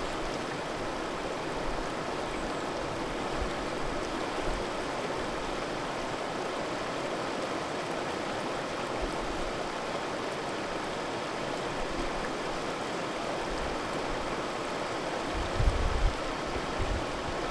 Seymour Valley Trailway
Lower Seymour conservation reserve, north vancouver